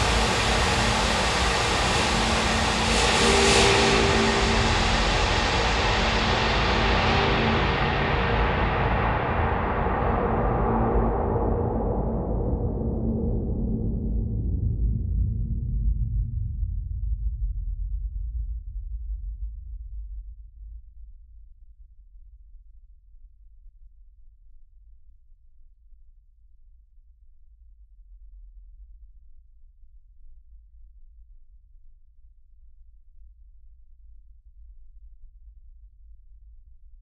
Hastedter Osterdeich, Bremen, Germany - Metal enclosure
Recording the sounds from a contact microphone on a metal enclosure, picking up the reverb of water flowing through the channels of a hydroelectric power plant.